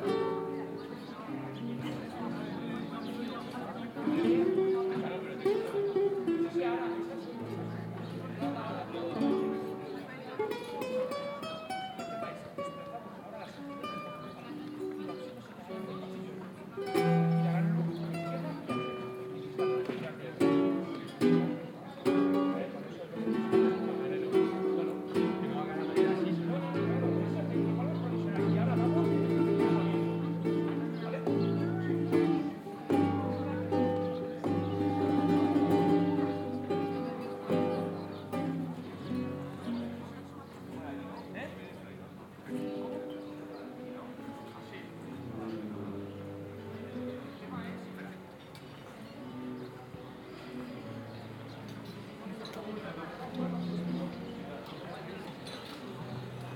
Square at Altea, Hiszpania - (31) BIGuitarist and bells
Binaural recording of walk around a Altea square with a musician, bells and dog at the end.
ZoomH2n, Soundman OKM